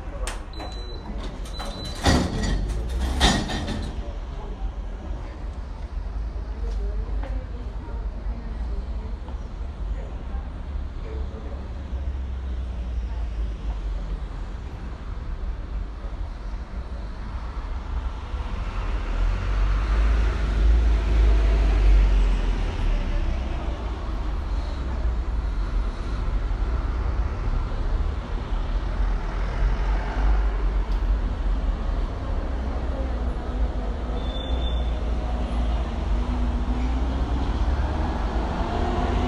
Cra., Medellín, Antioquia, Colombia - Entrada portería 2
Descripción
Sonido tónico: Gente hablando y pasando por los torniquetes
Señal sonora: Carros pasando
Micrófono dinámico (Celular)
Altura 1.70 cm
Duración 3:18
Grabado por Luis Miguel Henao y Daniel Zuluaga
24 September 2021, ~10:00